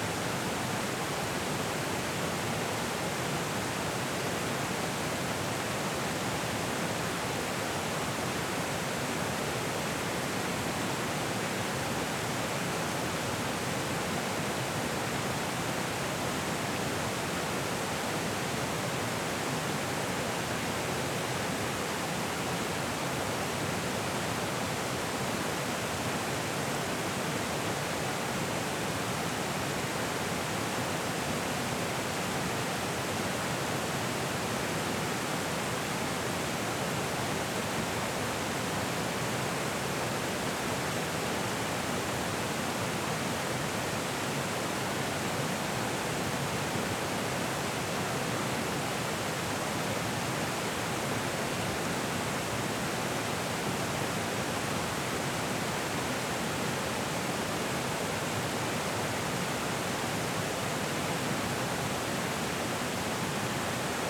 Roaring River State Park - Flowing River
After a night of rains a previously dry river begins flowing heavily.
Recorded with a Zoom H5
Missouri, United States, 11 April 2022, 10:58